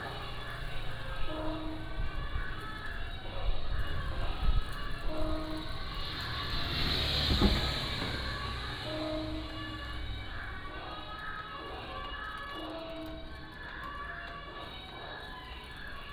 In the temple, Small village, Traffic Sound
Penghu County, Magong City, 23 October